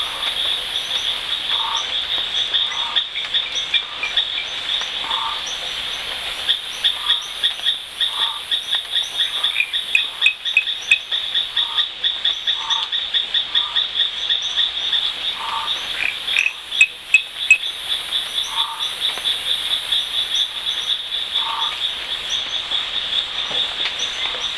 Sambava, Madagaskar - one froggy night @ Marojejy NP
Marojejy NP is a beautifull parc with friendly guides who know a lot. More than 60 species of frog, several endemic.